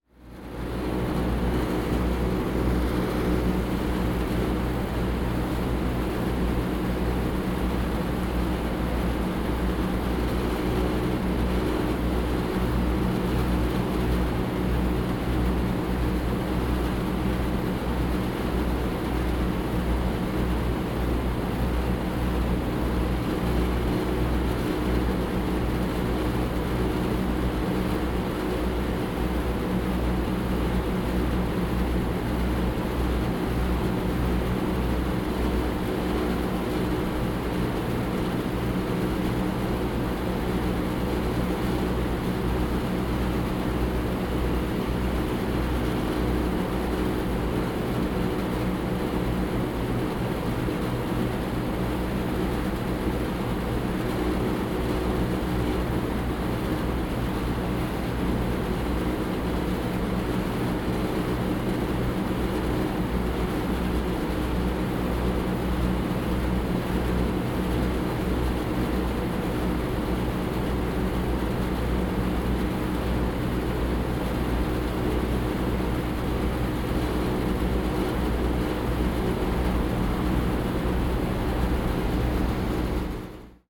National Centre of Polish Song, Opole, Poland - (46) National Centre of Polish Song atmo
National Centre of Polish Song atmo.
binaural recording with Soundman OKM + Zoom H2n
sound posted by Katarzyna Trzeciak
województwo opolskie, Polska, 13 November 2016